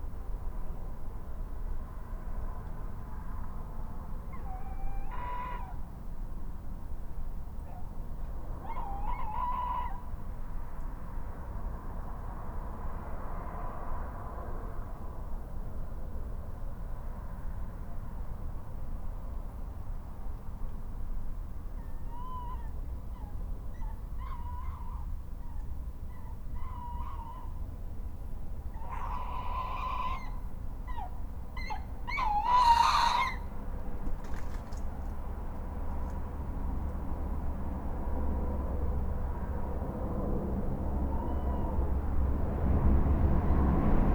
Recordings in the Garage, Malvern, Worcestershire, UK - Owls in the night
Last night at 01.34 owls are nearby and one flies into the birch tree about 8 metres from the recorder.
MixPre 6 II with 2 Sennheiser MKH 8020s